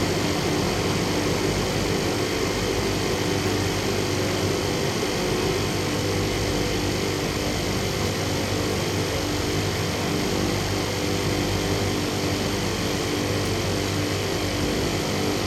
between big stacks of cooling containers - recorded during 'drone lab' workshop @ Tsonami Festival 2014
Región de Valparaíso, Chile